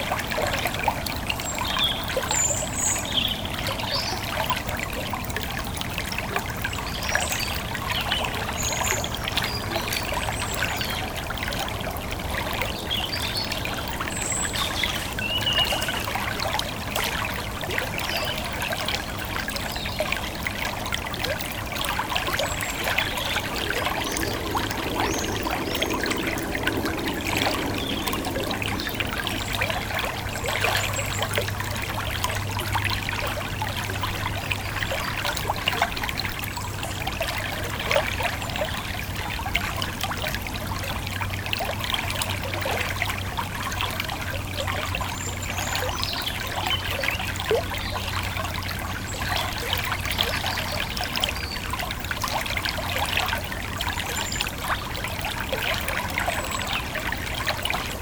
Court-St.-Étienne, Belgique - The Thyle river
The Thyle river, on a quiet rural place.
Court-St.-Étienne, Belgium